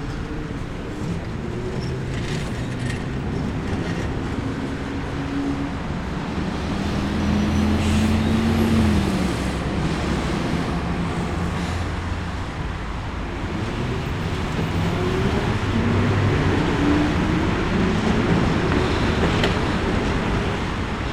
Corner of Alexandra Parade and Nicholson St, Carlton - Part 2 of peculiar places exhibition by Urban Initiatives; landscape architects and urban design consultants
landscape architecture, urban initiatives pty ltd, urban design, peculiar places